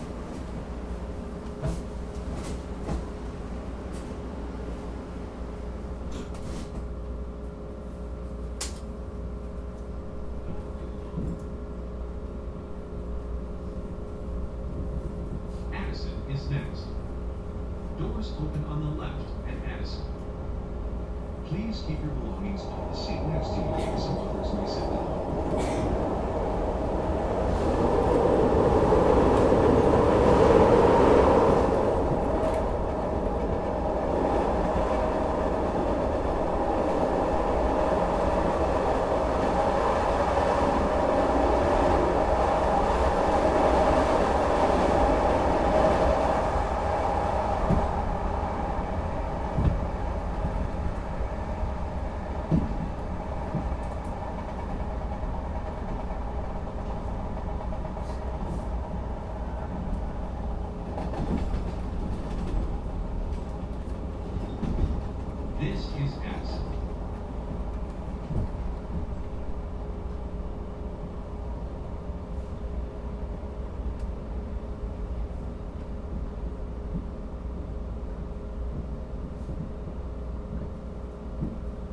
Jefferson Park, Chicago, IL, USA - CTA Blue Line train from Jefferson Park to Addison
Part of my morning commute on a Blue Line train beginning at Jefferson Park CTA station, through Addison station. Each station on this excerpt sits in the meridian of Interstate 90, known locally as the Kennedy Expressway.
Tascam DR-40.